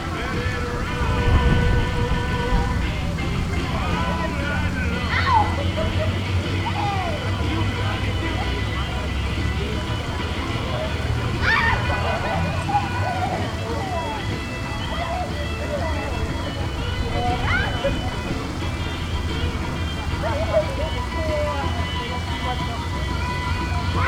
Ciudad Mitad del Mundo, Quito, Equador - Ciudad Mitad del Mundo - Middle City of the World
Pausa para descanso na Ciudad Mitad del Mundo, em Quito, próximo a um chafariz. De um restaurante próximo vinha a música Roadhouse Blues, da banda The Doors.
Pause to rest in Ciudad Mitad del Mundo, in Quito, near a fountain. From a nearby restaurant came the song Roadhouse Blues, from the band The Doors.
Gravador Tascam DR-05.
Tascam recorder DR-05.
12 April, 14:13